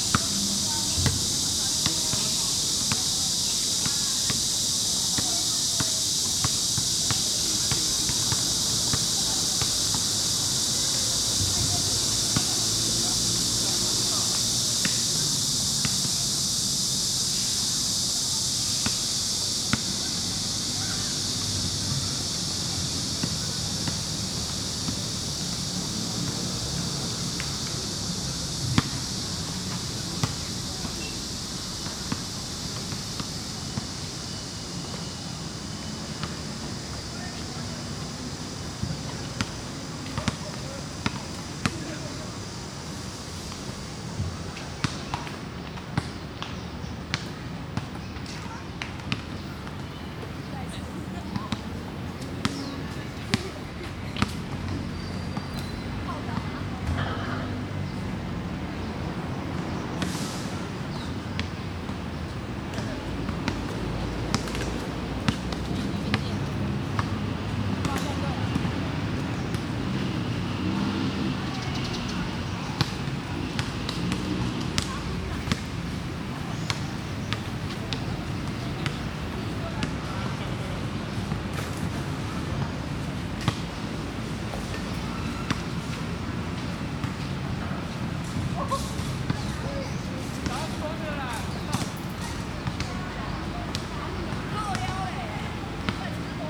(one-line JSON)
{"title": "玫瑰公園, Banqiao Dist., New Taipei City - walking in the Park", "date": "2011-06-22 17:33:00", "description": "walking in the Park, Children Playground, Basketball court\nSony Hi-MD MZ-RH1 +Sony ECM-MS907", "latitude": "25.02", "longitude": "121.46", "altitude": "14", "timezone": "Asia/Taipei"}